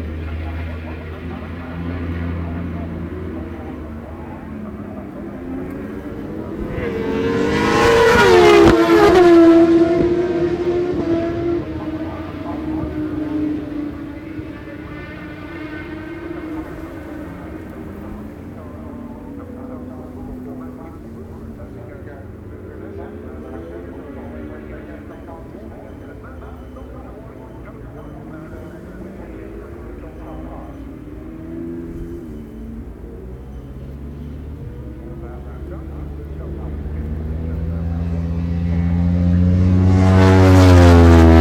Silverstone Circuit, Towcester, UK - World Superbikes 2004 ... superbikes ...
World Superbikes 2004 ... Qualifying ... part two ... one point stereo mic to minidisk ...
12 June 2004